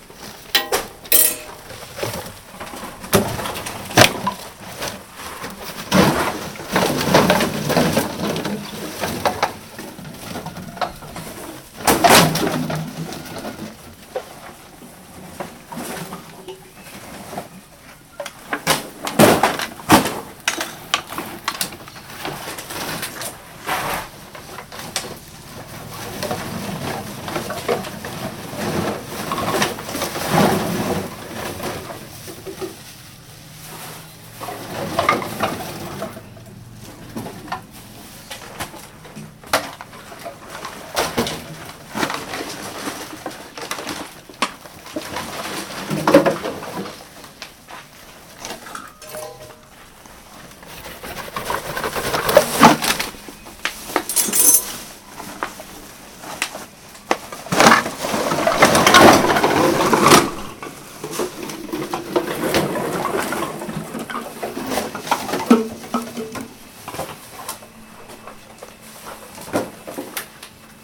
{
  "title": "Parque Santander., Cra., Mompós, Bolívar, Colombia - Bodega de reciclaje",
  "date": "2022-05-02 10:52:00",
  "description": "Una bodega de reciclaje donde compactan botellas de plástico, cartón y chatarra.",
  "latitude": "9.24",
  "longitude": "-74.42",
  "altitude": "21",
  "timezone": "America/Bogota"
}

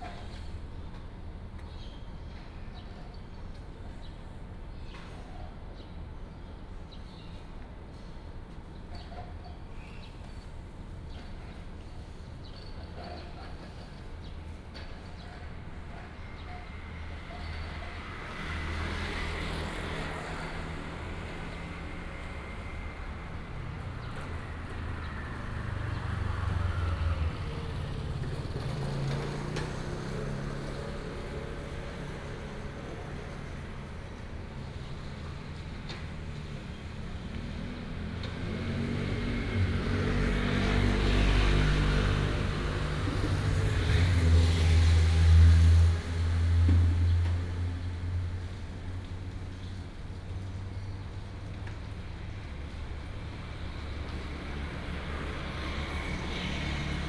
{
  "title": "Ln., Chikan S. Rd., Ziguan Dist., Kaohsiung City - At the intersection",
  "date": "2018-05-07 14:02:00",
  "description": "At the intersection, Traffic sound, Construction sound, Bird sound\nBinaural recordings, Sony PCM D100+ Soundman OKM II",
  "latitude": "22.74",
  "longitude": "120.25",
  "altitude": "11",
  "timezone": "Asia/Taipei"
}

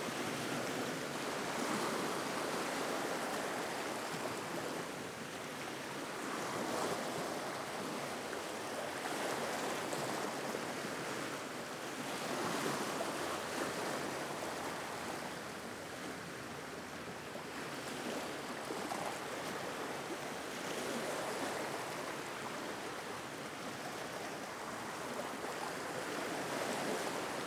{
  "title": "Partida Ca Po-cl Portic, Alicante, Espagne - Plage de Caliete - Javea - Espagne - Ambiance - 2",
  "date": "2022-07-18 11:45:00",
  "description": "Plage de Caliete - Javea - Espagne\nAmbiance - 2\nZOOM F3 + AKG C451B",
  "latitude": "38.76",
  "longitude": "0.21",
  "altitude": "1",
  "timezone": "Europe/Madrid"
}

